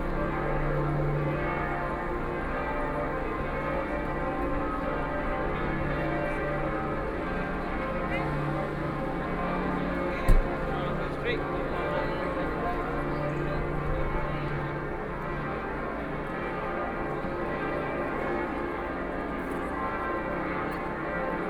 Walking around the square, Church bells, A lot of tourists, Footsteps
11 May, 11:53